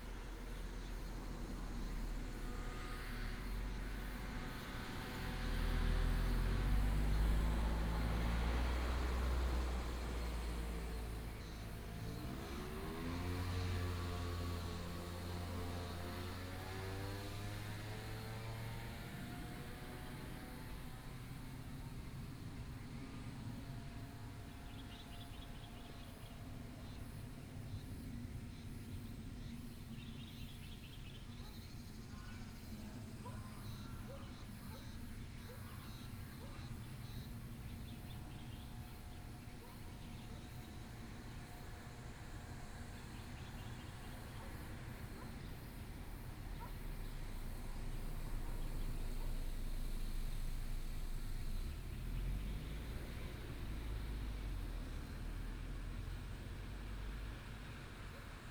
北河村活動中心, Gongguan Township - Small settlements in the mountains
Small settlements in the mountains, traffic sound, The sound of birds, The dog sound came from afar, Binaural recordings, Sony PCM D100+ Soundman OKM II